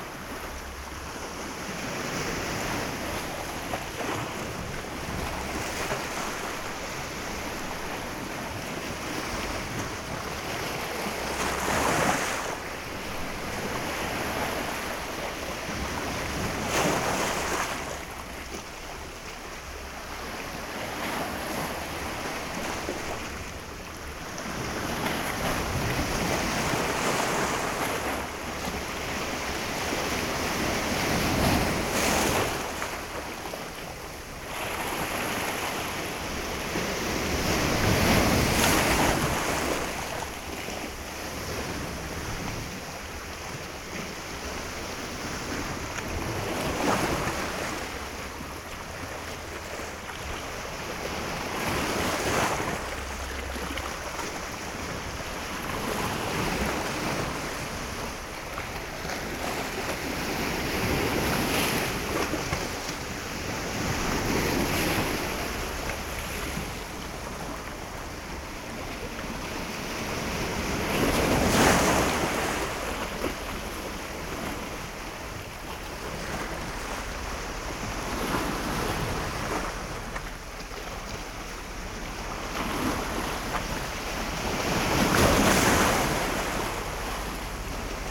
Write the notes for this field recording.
Binaural recording of waves hitting rocks in the small cove near the beach. Binaural recording made with DPA 4560 on Tascam DR 100 MK III.